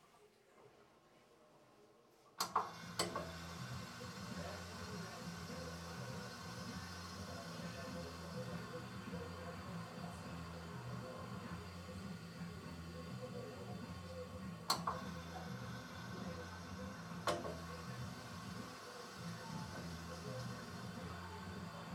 Rte des Bruyères, Longuenesse, France - Longuenesse - Pas-de-Calais - Centre de Détention
Longuenesse - Pas-de-Calais
Centre de Détention
intérieur cellule
Hauts-de-France, France métropolitaine, France, 12 May 2022